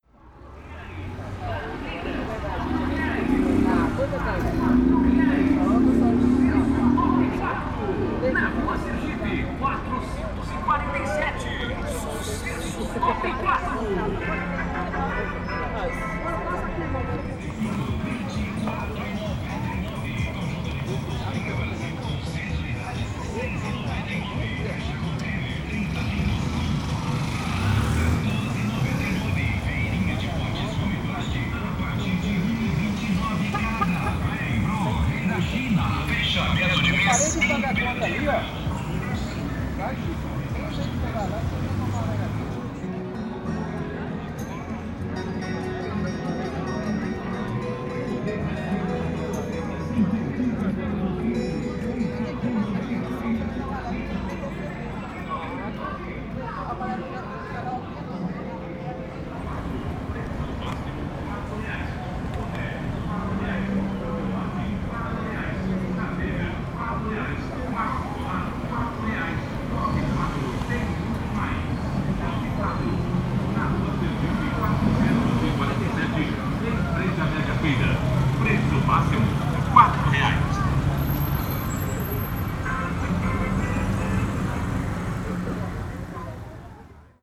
Calçadão de Londrina: Propaganda volante - Propaganda volante / Mobile advertising
Panorama sonoro: trechos de passagens de carros de propaganda por ruas que cruzam o Calçadão. Os carros anunciavam promoções a partir de locuções gravadas e buscavam chamar atenção dos pedestres com trechos da música do plantão jornalístico de uma emissora de TV.
Sound Panorama:
passages of propaganda cars through streets that cross the boardwalk. the cars announced promotions from recorded phrases and sought to draw attention from pedestrians with snippets of music from the journalistic watch of a TV station.
- Centro, Londrina - PR, Brazil, 2 September 2017